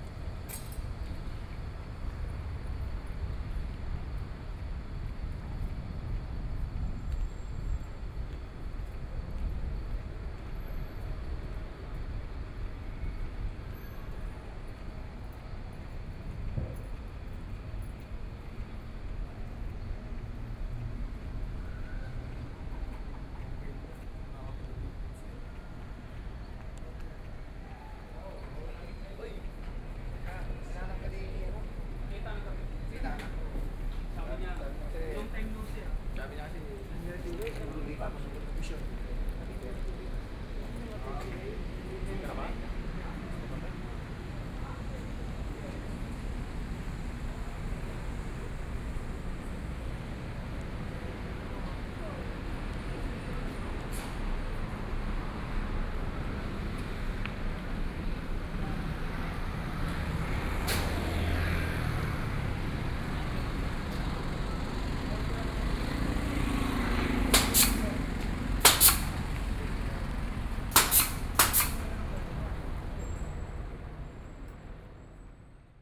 {
  "title": "Shuangcheng St., Taipei City - Walking through the small streets",
  "date": "2014-04-27 10:56:00",
  "description": "Walking through the small streets\nSony PCM D50+ Soundman OKM II",
  "latitude": "25.07",
  "longitude": "121.52",
  "altitude": "13",
  "timezone": "Asia/Taipei"
}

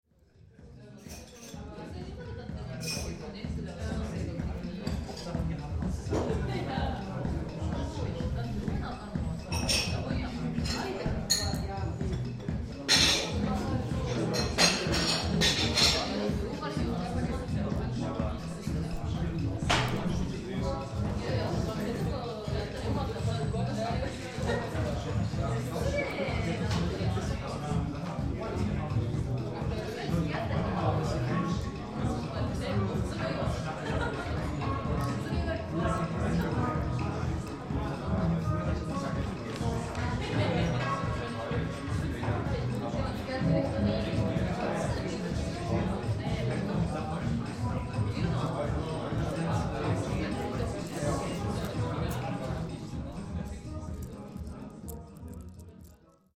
{
  "title": "sunday afternoon Xpresso",
  "description": "my former lieblings-cafe, haliflor\nbefore i left prenzlauer berg. sounds still the same as i remember it, this sunday afternoon. 1 quick espresso, 1 juice for L., 1 guy right side writing elusive signs on sheets.\nrecorded 03.02.2008, ~ 17:00",
  "latitude": "52.54",
  "longitude": "13.41",
  "altitude": "54",
  "timezone": "GMT+1"
}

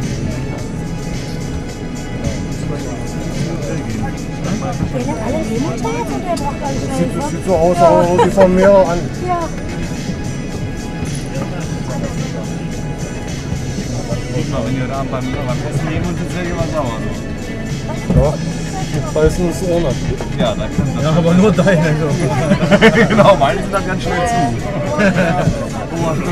{
  "title": "Luton Airport, UK",
  "date": "2010-06-24 19:05:00",
  "description": "French air traffic controller strike causes chaos at Luton airport.",
  "latitude": "51.88",
  "longitude": "-0.38",
  "altitude": "161",
  "timezone": "Europe/Berlin"
}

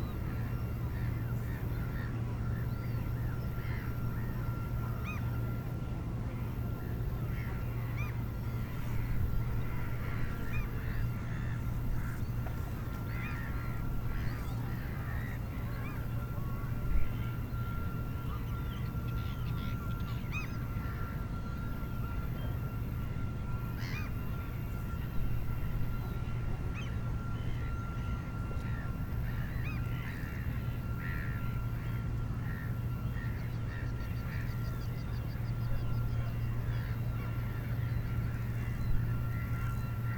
{
  "title": "Tallinn, Kopli, Sepa",
  "date": "2011-07-07 09:55:00",
  "description": "tallinn, kopli, seaside, ambience",
  "latitude": "59.46",
  "longitude": "24.68",
  "altitude": "3",
  "timezone": "Europe/Tallinn"
}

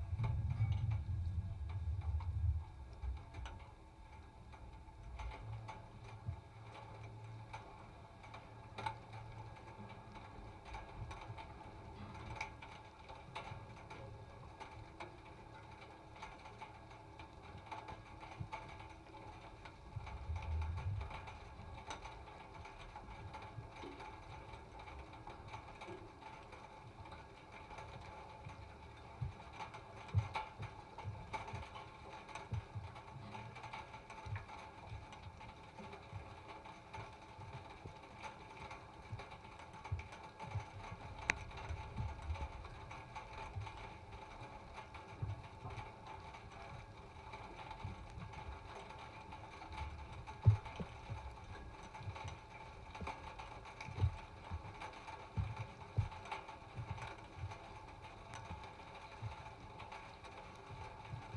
{
  "title": "Utena, Lithuania, abandoned hangar construction and rain",
  "date": "2019-07-30 16:00:00",
  "description": "small local aeroport. abandoned hangar. rain starts. contact mics on door's construction",
  "latitude": "55.49",
  "longitude": "25.72",
  "timezone": "GMT+1"
}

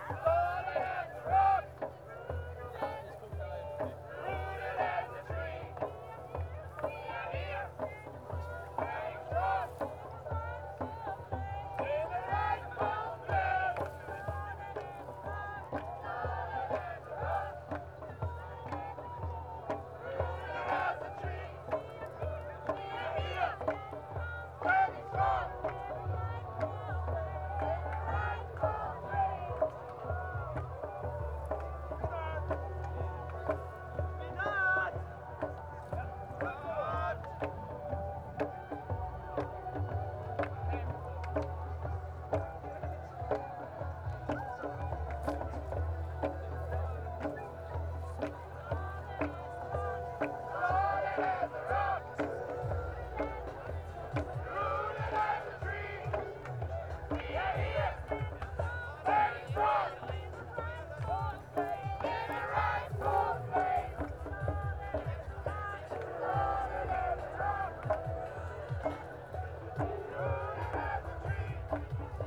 {
  "title": "near Morschenich, Hambacher Forst, Deutschland - sound of demonstration",
  "date": "2018-09-05 19:15:00",
  "description": "sound of the nearby demonstration against the deforestation of the remains of this forest (Hambacher Forst), in order for German energy company RW Power to exploit lignite resources in this area\n(Sony PCM D50)",
  "latitude": "50.88",
  "longitude": "6.55",
  "altitude": "104",
  "timezone": "Europe/Berlin"
}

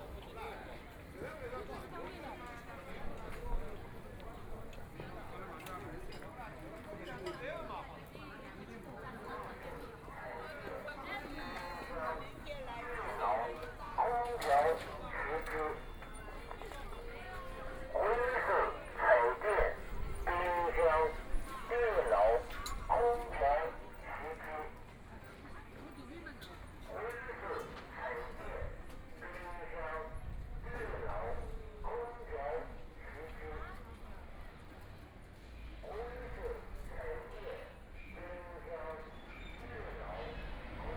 {
  "title": "Guangqi Road, Shanghai - Walking in the street market",
  "date": "2013-11-25 14:48:00",
  "description": "Walking in the street market, Binaural recording, Zoom H6+ Soundman OKM II",
  "latitude": "31.23",
  "longitude": "121.49",
  "altitude": "8",
  "timezone": "Asia/Shanghai"
}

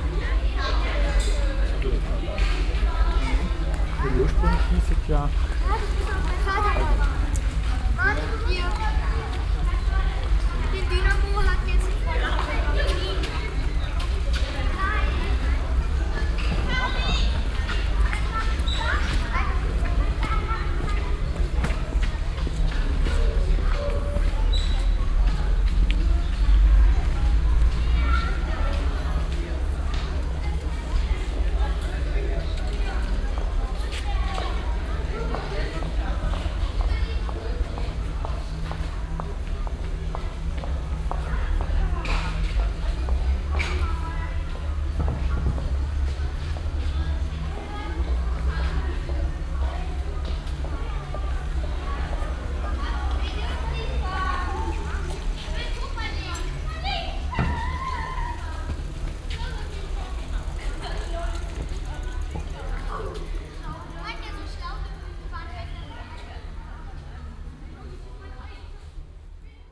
{
  "title": "refrath, mohnweg, waldorf schule, schulhof",
  "description": "soundmap: refrath/ nrw\nschulhof, morgens, kinder auf fahrrädern, schritte, gespräche\nproject: social ambiences/ listen to the people - in & outdoor nearfield recordings",
  "latitude": "50.96",
  "longitude": "7.11",
  "altitude": "74",
  "timezone": "GMT+1"
}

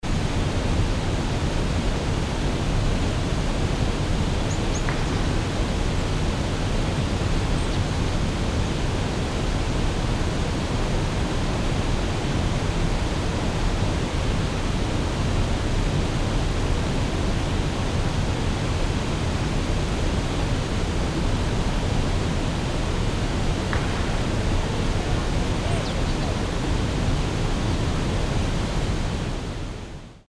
{
  "title": "Trnovica, Grobnik, waterfall",
  "date": "2002-11-14 13:26:00",
  "description": "Waterfall @ Trnovica, Grobnik, winter time.\nrecording setup: M/S(Sony stereo condenser via Sony MD @ 44100KHz 16Bit",
  "latitude": "45.40",
  "longitude": "14.43",
  "altitude": "294",
  "timezone": "Europe/Zagreb"
}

